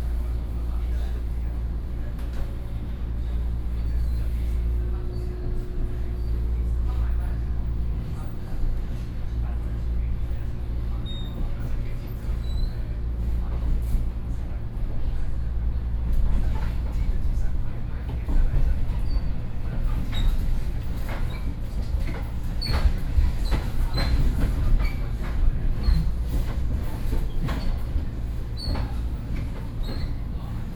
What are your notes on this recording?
Inside the train, Ordinary EMU, Sony PCM D50 + Soundman OKM II